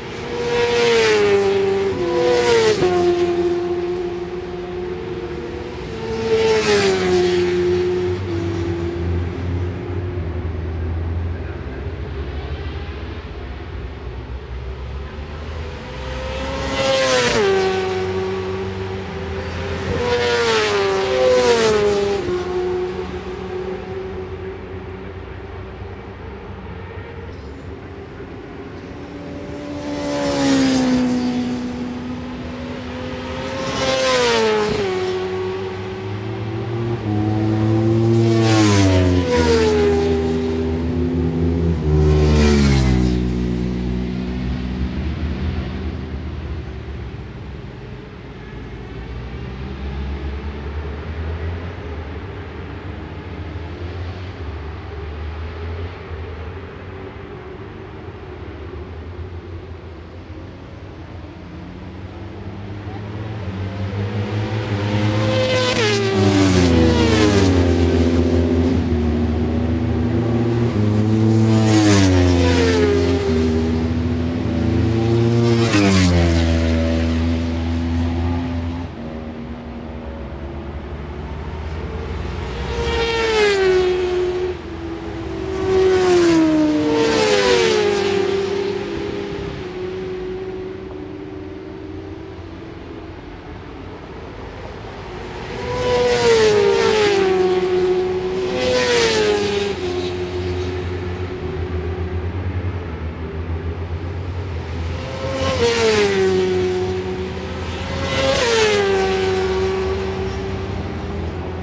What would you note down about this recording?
WSB 1998 ... Supersports 600s ... FP3 ... one point stereo mic to minidisk ...